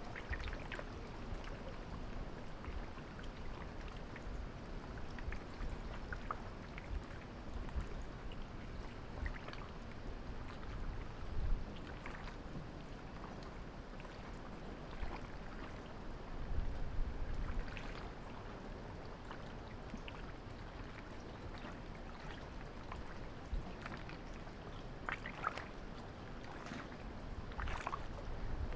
赤馬漁港, Xiyu Township - Waves and tides
In the dock, Waves and tides
Zoom H6 +Rode NT4
Xiyu Township, 澎5鄉道, 22 October 2014